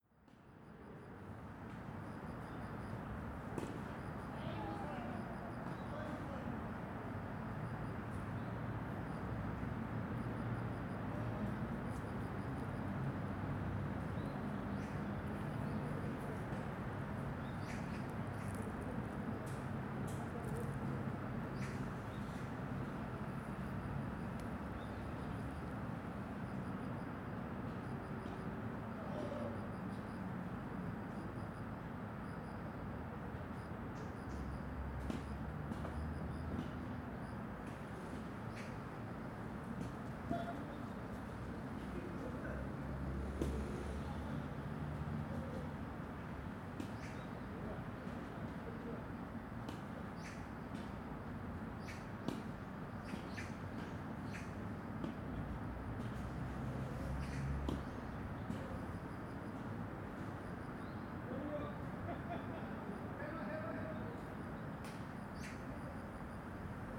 대한민국 서울특별시 서초구 반포동 1294 - Banpo Jugong Apartment, Tennis Court
Banpo Jugong Apartment, Tennis Court, People Playing Tennis, Cricket
반포주공1단지, 늦여름, 테니스치는 사람들, 풀벌레